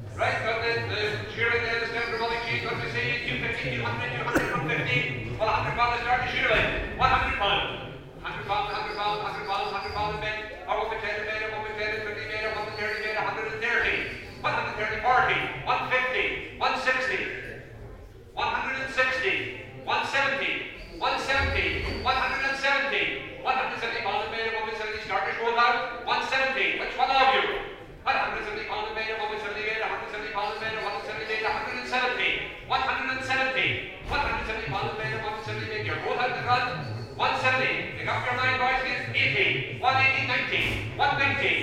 Ram Auction, Shetland Marts, Shetland Islands, UK - Ram Auction, Shetland Wool Week, 2013
This is the sound of rams being auctioned off after the Shetland Flock Book. The Flock Book is when crofters in Shetland bring their rams to the auction house to be judged and shown and entered into the flock book for the breed. This keeps a record of the genetic lines, and promotes the Shetland breed of sheep. After seeing all the available animals, crofters bid on new rams to put to their ewes over the winter, and sell their own best animals to other folks looking to do the same. It's an amazing opportunity to see some really fine examples of Shetland sheep, and the auction has an extraordinary and beautiful rhythm to it. It's also FAST! It's all about figuring out which rams will improve your flock and so the really good ones that have great genes go for a lot of money.
12 October 2013, 14:30